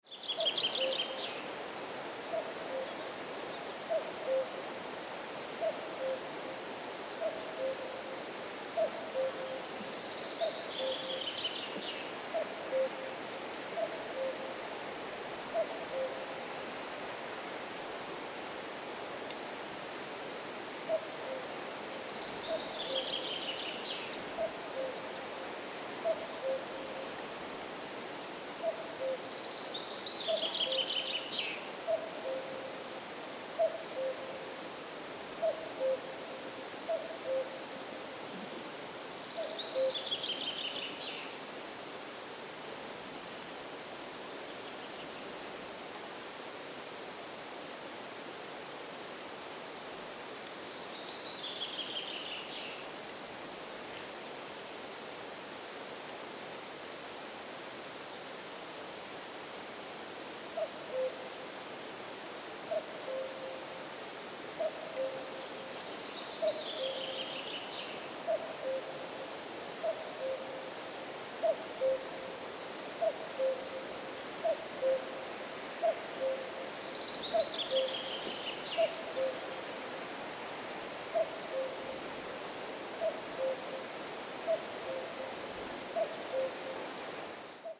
cuckoo
Recorded on Zoom H4n.
кукушка, в районе реки Лая.